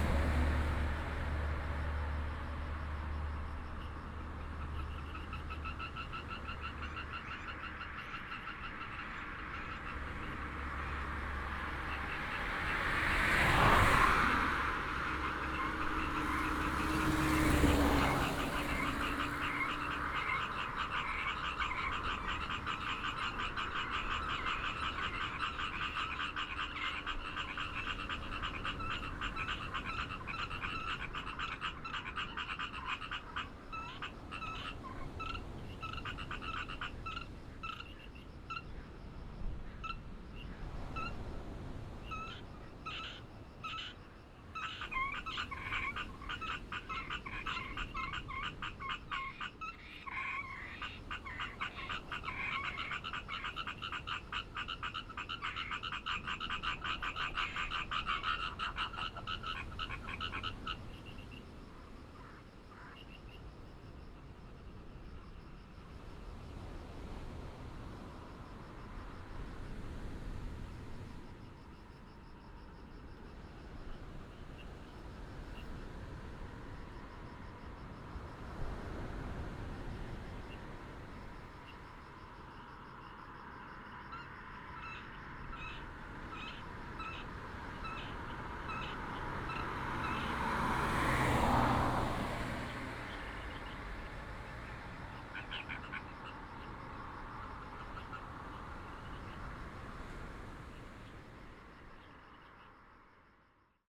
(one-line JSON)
{"title": "南迴公路421K, Taimali Township - Traffic and Frog sound", "date": "2018-04-14 06:00:00", "description": "Beside the road, Traffic sound, Frog croak, Sound of the waves\nBinaural recordings, Sony PCM D100+ Soundman OKM II", "latitude": "22.48", "longitude": "120.95", "altitude": "23", "timezone": "Asia/Taipei"}